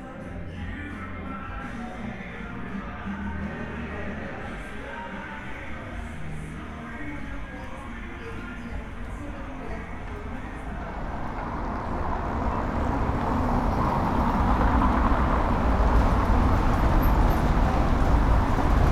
C. Francisco I. Madero, Centro, León, Gto., Mexico - Caminando por la calle Madero.
Walking down Madero street.
From Zaragoza street to Donato Guerra street.
I made this recording on march 29th, 2022, at 6:00 p.m.
I used a Tascam DR-05X with its built-in microphones and a Tascam WS-11 windshield.
Original Recording:
Type: Stereo
Esta grabación la hice el 29 de marzo de 2022 a las 18:00 horas.